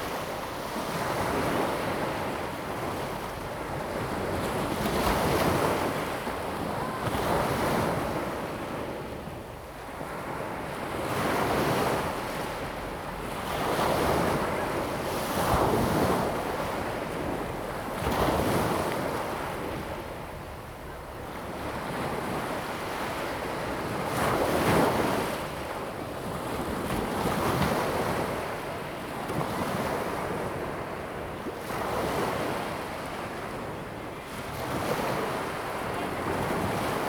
中澳沙灘, Hsiao Liouciou Island - The sound of waves
Small beach, The sound of waves and tides, Yacht whistle sound
Zoom H2n MS +XY
1 November 2014, 16:45